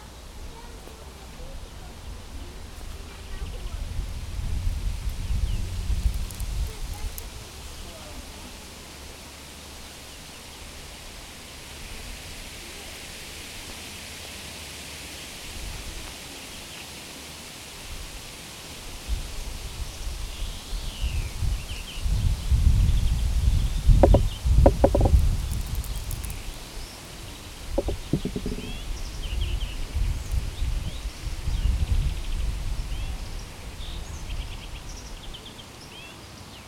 Ukraine / Vinnytsia / project Alley 12,7 / sound #7 / the sound of the peninsula